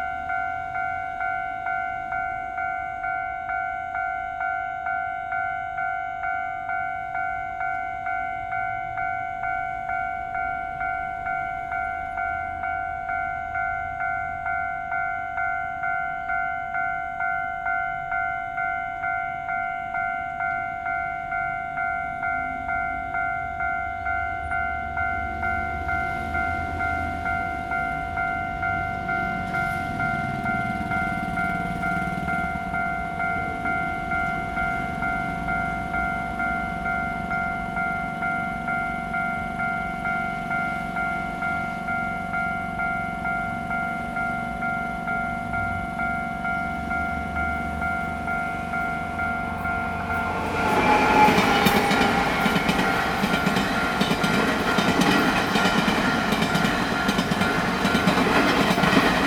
Changlin Rd., Douliu City - Next to the railway crossing
Next to the railway crossing, The train passes by, Traffic sound
Zoom H2n MS+XY